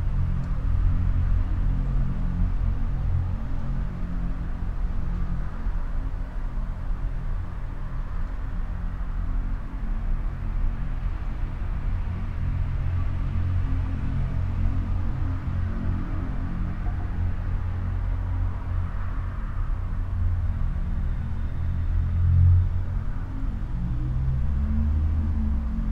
Utena, Lithuania, in empty tube
small omni mics in an empty tube..resonances of the near traffic.